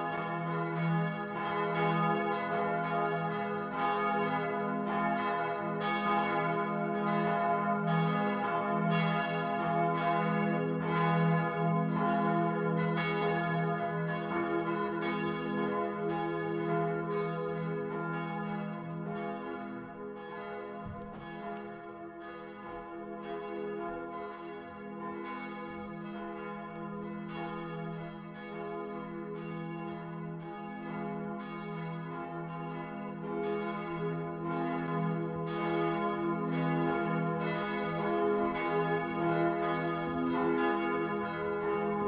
Tychona Braha, Benátky nad Jizerou I, Benátky nad Jizerou, Czechia - nedělní zvony
Sunday noon bells on the bell tower of the Kostel Narození Panny Marie, from above and under